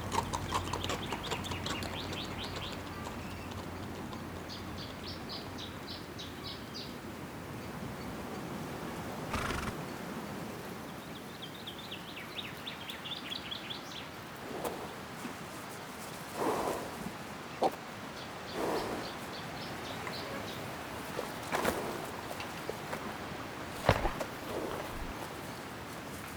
Auf einem Pferdehof an einem milden Frühlingsnachmittag. Der Klang eines alten Gattertors, das sich im Wind bewegt, ein Pferd kaut Hafer, ein anderes Tier galoppiert vorbei, das Schnauben der Nüstern.
At a horse yard.
Projekt - Stadtklang//: Hörorte - topographic field recordings and social ambiences
Essen, Germany, 26 April 2014